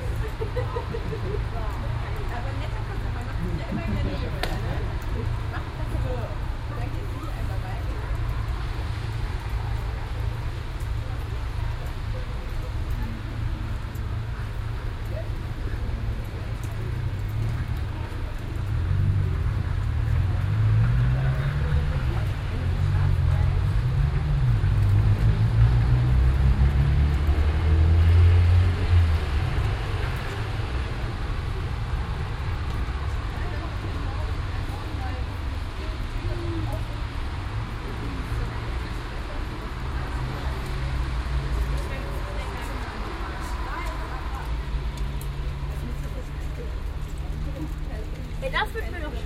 Löhrrondell, square, Koblenz, Deutschland - Löhrrondell 2
Binaural recording of the square. Second of several recordings to describe the square acoustically. Here is a dialogue between some people audible, someone tries to sell something.